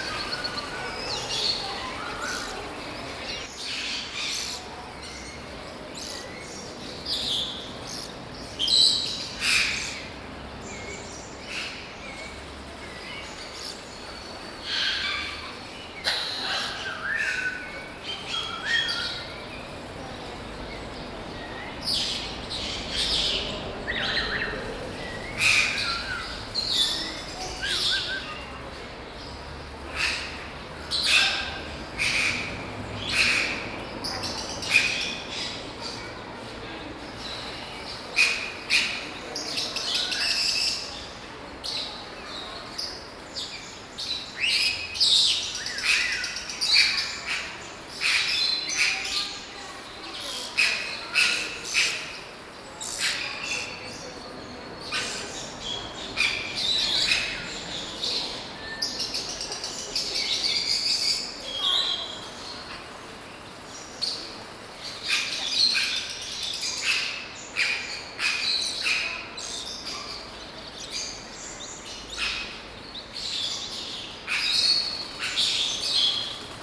botanical garden Charles University, exotic birds exhibition
annual exhibition in the glasshouse of the Botanical Garden.
25 August 2011